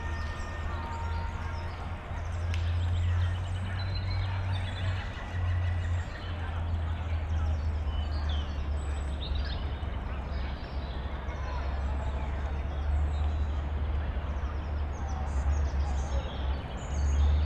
{
  "title": "Volksgartenpark, Köln - evening ambience",
  "date": "2013-04-25 19:40:00",
  "description": "the sound of freight trains is audible day and night. in spring and summer time, people hang out here for fun and recreation.\n(SD702, Audio Technica BP4025)",
  "latitude": "50.92",
  "longitude": "6.95",
  "altitude": "54",
  "timezone": "Europe/Berlin"
}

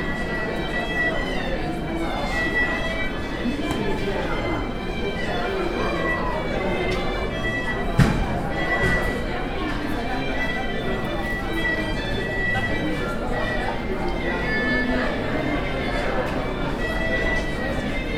{
  "title": "Les Halles, Paris, France - Chatelet - Les Halles RER station, Waiting RER A",
  "date": "2012-06-23 18:34:00",
  "description": "France, Paris, Chatelet - Les Halles, RER station, RER A, train, binaural",
  "latitude": "48.86",
  "longitude": "2.35",
  "altitude": "35",
  "timezone": "Europe/Paris"
}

